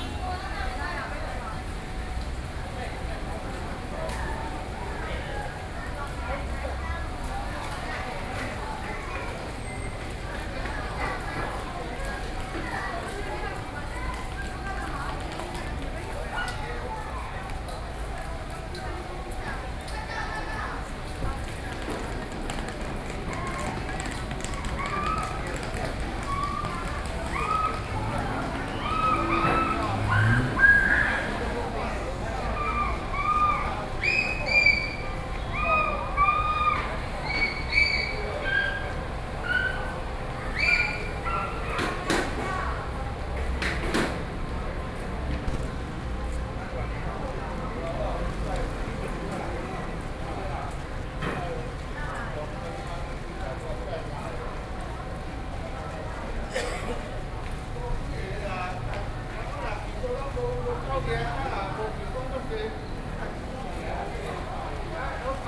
ChiayiStation, TRA, Chiayi City - Station exit
Construction noise, Message broadcasting station, Taxi driver dialogue, Sony PCM D50 + Soundman OKM II